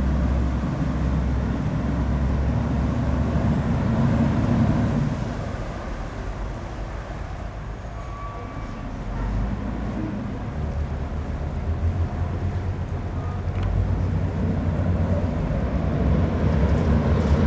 Young tourists discuss about sexfriends, water of the Seine river is flowing on stairs, a big tourist boat begins to navigate.
23 September, 15:10